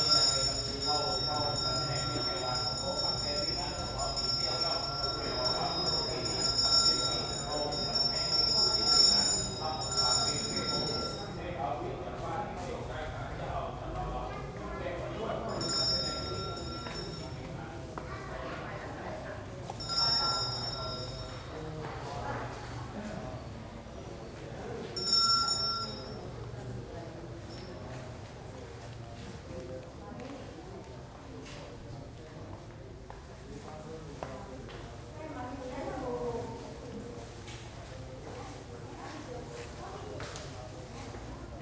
Goddes of Mercy Temple, Kau Cim oracle sticks, bells
(zoom h2, binaural)